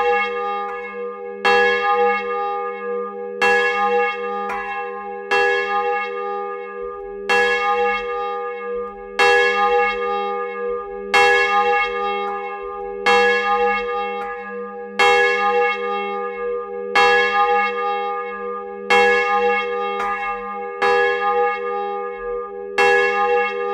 Rue de la Vieille Cour, Arcisses, France - Margon -Église Notre Dame du Mont Carmel
Margon (Eure et Loir)
Église Notre Dame du Mont Carmel
la volée